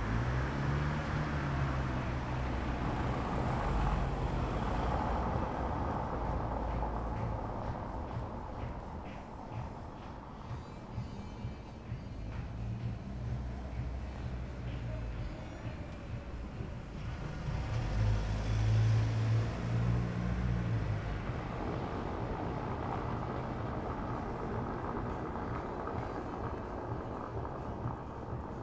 {"title": "Berlin: Vermessungspunkt Maybachufer / Bürknerstraße - Klangvermessung Kreuzkölln ::: 24.09.2010 ::: 01:15", "date": "2010-09-24 01:15:00", "latitude": "52.49", "longitude": "13.43", "altitude": "39", "timezone": "Europe/Berlin"}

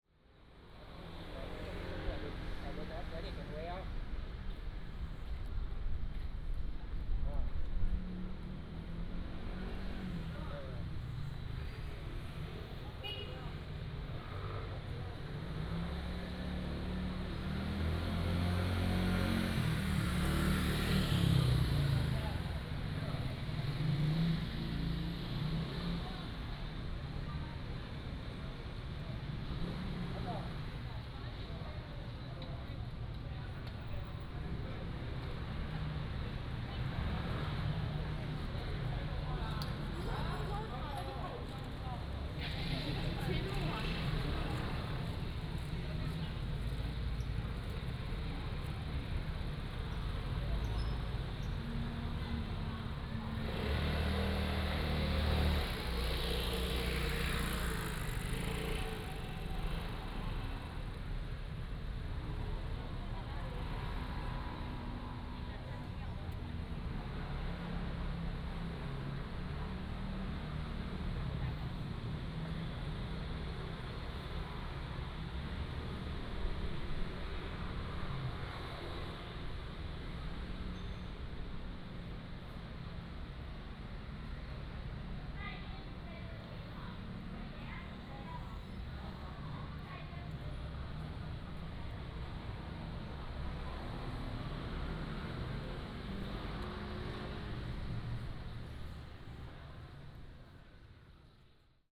{"title": "代天府, Jincheng Township - in front of the temple", "date": "2014-11-03 18:03:00", "description": "In the square, in front of the temple, Traffic Sound", "latitude": "24.43", "longitude": "118.32", "altitude": "12", "timezone": "Asia/Taipei"}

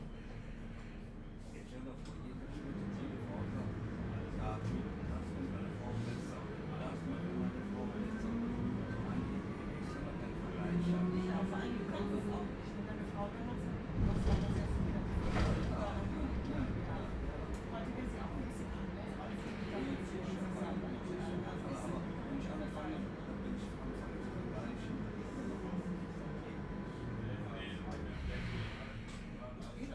{"title": "Altstadt-Süd, Köln, Deutschland - Wir haben genug gestanden heute", "date": "2012-03-01 23:07:00", "description": "Riding home in the tram in the evening after a visit to the pub with colleagues.", "latitude": "50.93", "longitude": "6.94", "altitude": "59", "timezone": "Europe/Berlin"}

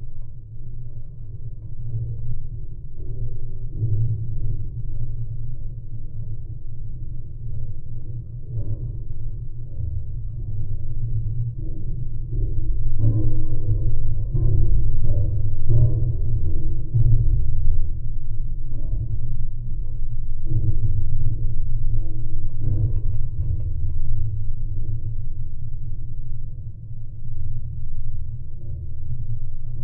{"title": "Ukmergė, Lithuania, hilltop fence", "date": "2022-01-08 15:00:00", "description": "metallic fence on Ukmerge hilltop. low frequencies, geophone.", "latitude": "55.25", "longitude": "24.77", "altitude": "56", "timezone": "Europe/Vilnius"}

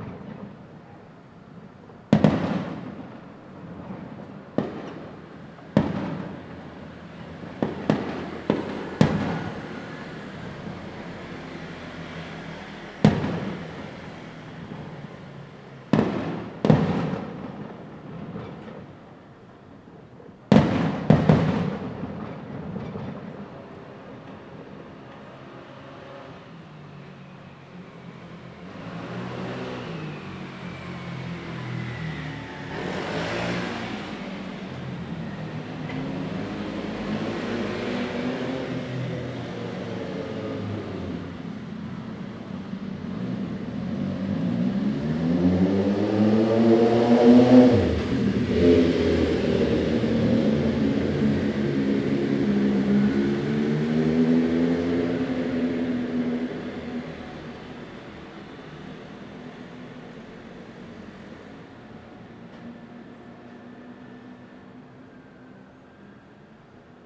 {"title": "大仁街, Tamsui District, New Taipei City - Firework and Traffic Sound", "date": "2016-03-17 20:45:00", "description": "Firework, Traffic Sound\nZoom H2n MS+XY", "latitude": "25.18", "longitude": "121.44", "altitude": "45", "timezone": "Asia/Taipei"}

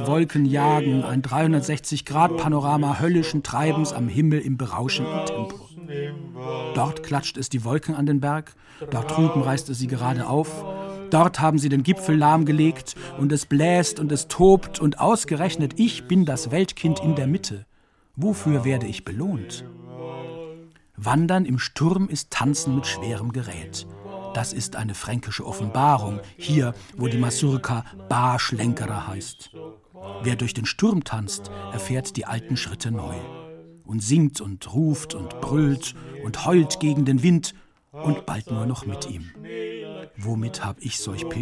{"title": "zwischen grattstadt und harras - vor der hoelle", "date": "2009-08-18 16:49:00", "description": "Produktion: Deutschlandradio Kultur/Norddeutscher Rundfunk 2009", "latitude": "50.39", "longitude": "10.85", "altitude": "436", "timezone": "Europe/Berlin"}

